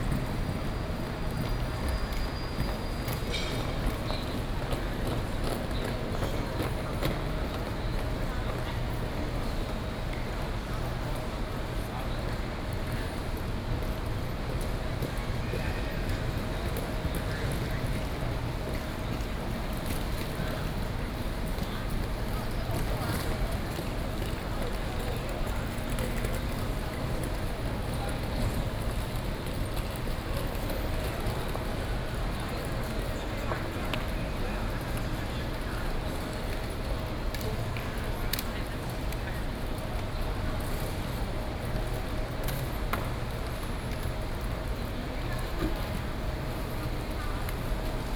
Zuoying, Kaohsiung - Station hall
Taiwan high-speed rail station hall, Broadcast messages, Sony PCM D50 + Soundman OKM II
左營區 (Zuoying), 高雄市 (Kaohsiung City), 中華民國, 21 May 2012